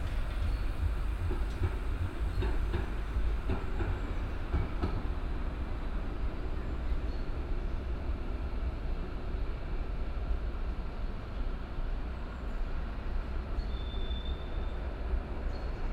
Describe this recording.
A train driving out of the tunnel another one entering it. Projekt - Klangpromenade Essen - topographic field recordings and social ambiences